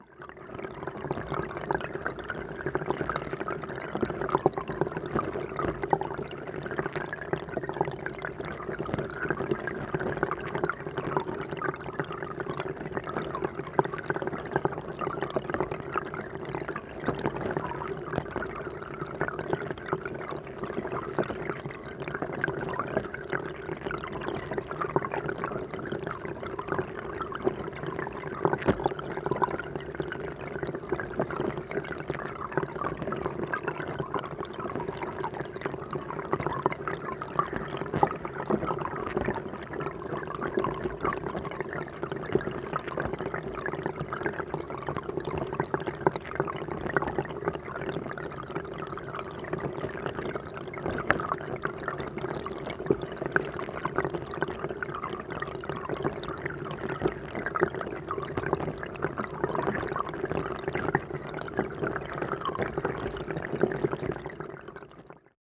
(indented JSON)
{
  "title": "Craighead Avenue Park, Glasgow, Glasgow City, UK - Hydrophone Recording of Molendinar Burn",
  "date": "2015-06-26 20:00:00",
  "description": "Underwater hydrophone recording of Molendinar Burn where it enters Craighead Avenue Park as a small waterfall.",
  "latitude": "55.88",
  "longitude": "-4.19",
  "altitude": "73",
  "timezone": "Europe/London"
}